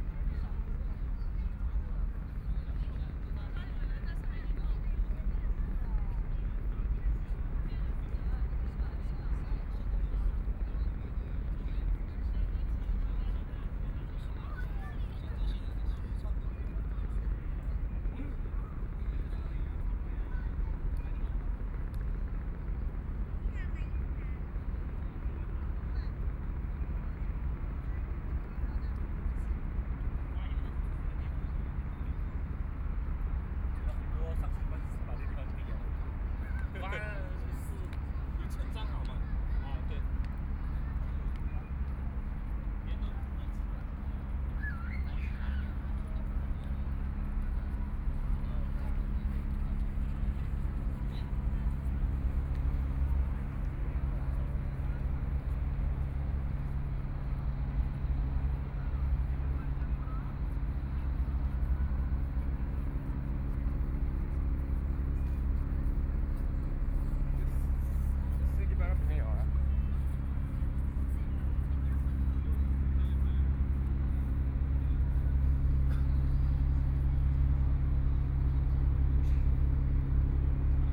the Bund, Shanghai - environmental sounds
sound of the Boat traveling through, Many tourists, In the back of the clock tower chimes, Binaural recordings, Zoom H6+ Soundman OKM II